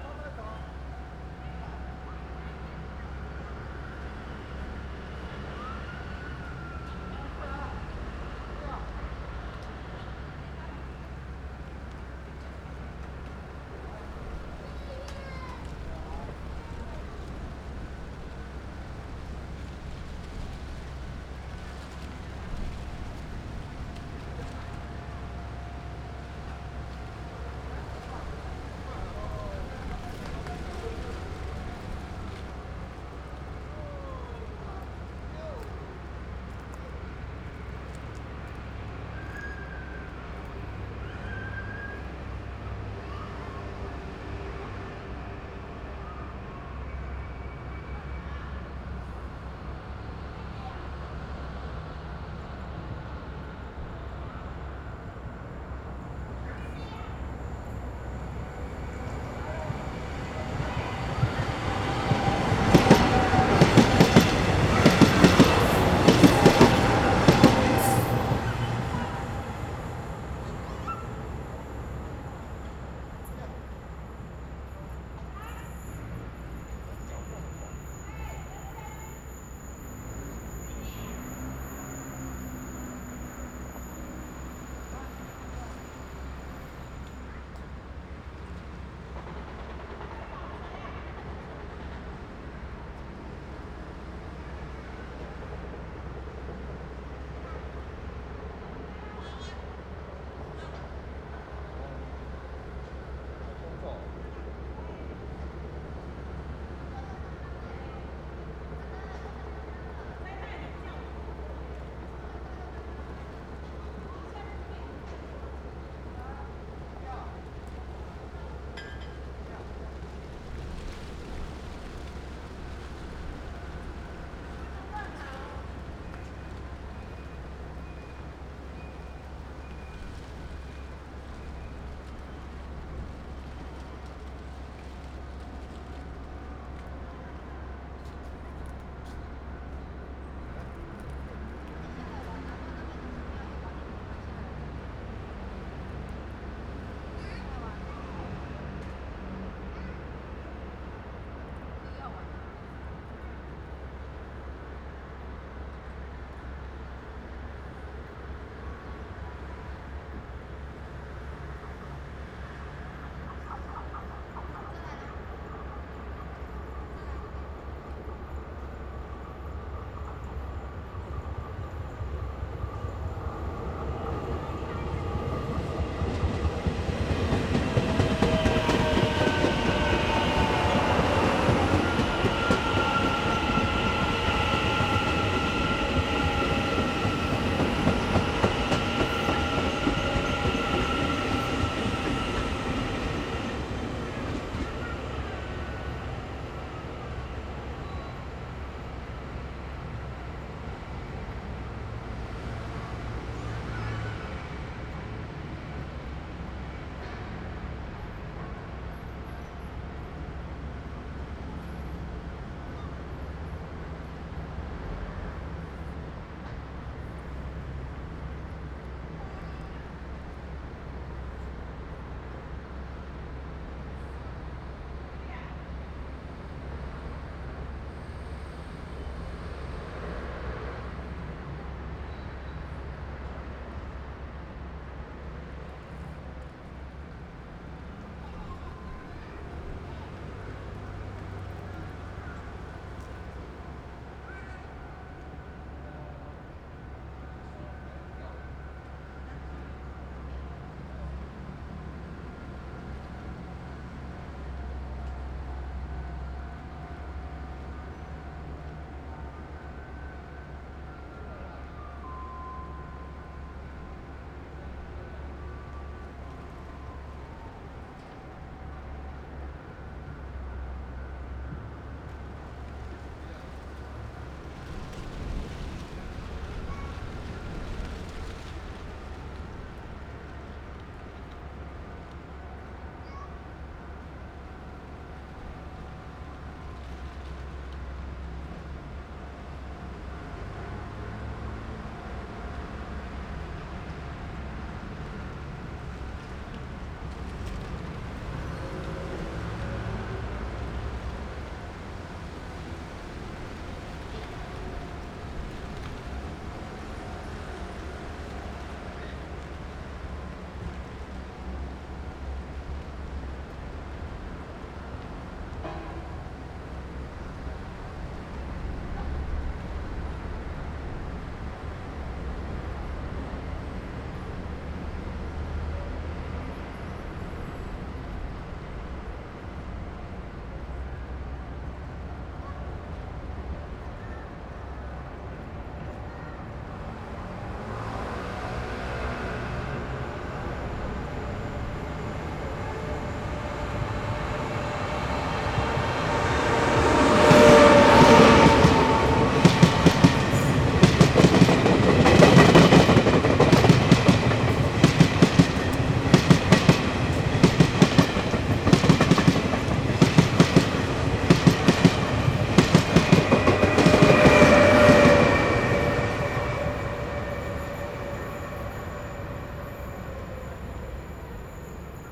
Traffic sound, Train traveling through, Construction sound
Zoom H6 +Rode NT4
Hsinchu City, Taiwan, 13 February 2017